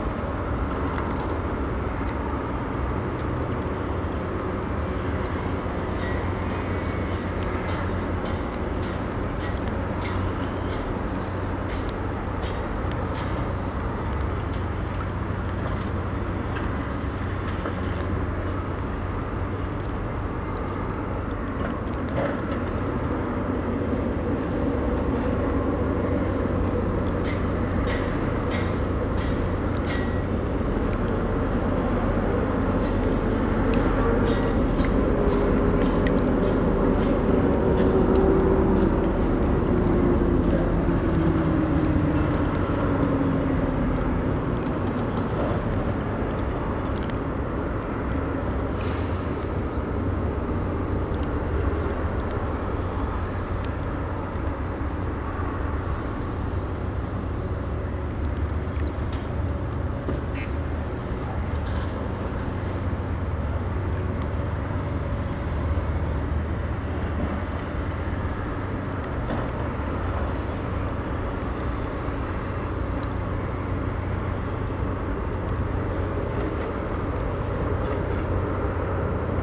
Maribor, Slovenia - one square meter: urban noise along the riverbank
construction noise and the rumble of traffic on a nearby bridge, along with the lapping riverwater, crickets, and an occasional swan, recorded from the ruins of a staircase down to the water from what is now a parking lot.. all recordings on this spot were made within a few square meters' radius.